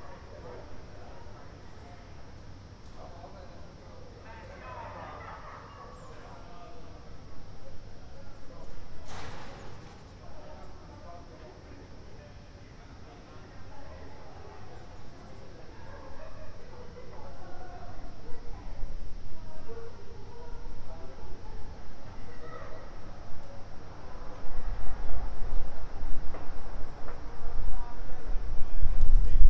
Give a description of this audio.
"Sunny January Sunday with students voices in the time of COVID19": Soundscape, Chapter CLXXXVI of Ascolto il tuo cuore, città, I listen to your heart, city. Sunday, January 30th, 2022. Fixed position on an internal terrace at San Salvario district Turin. Start at 1:45 p.m. end at 2:21 p.m. duration of recording 35:56.